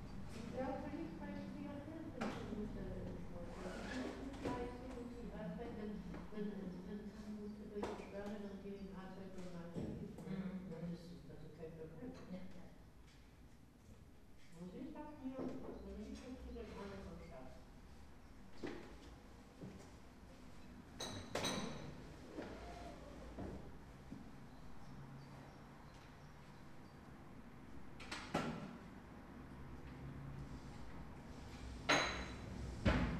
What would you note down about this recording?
Kinästhetics course, day 2, before morning lesson; the cellar souterrain room provides a peculiar reverb. "H2"